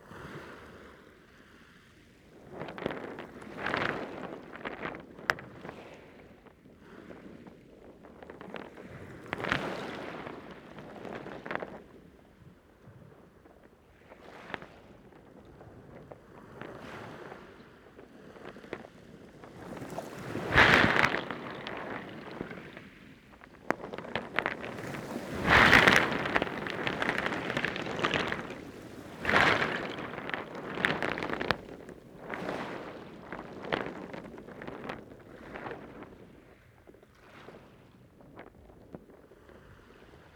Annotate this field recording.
Recorded by a hydrophone (underwater microphone) in combination with normal mics this gives an impression of how it sounds to be amongst, or under, the stones as the waves break above.